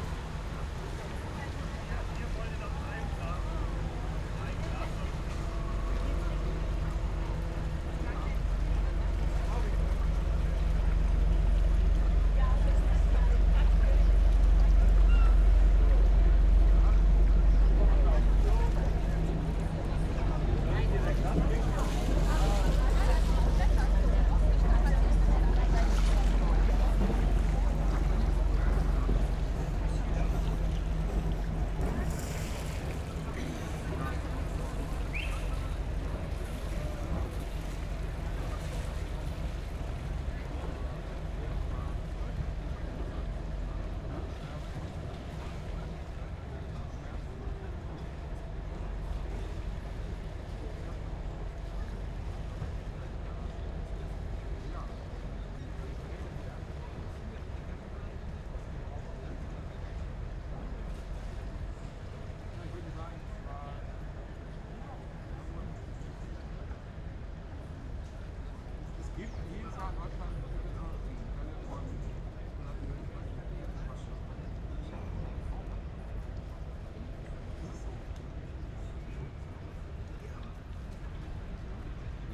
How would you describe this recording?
sitting at the Landwehrkanal, listening to the air, Friday evening. A tourist boat is passing by, sounds from a distance. (SD702, NT1 ORTF)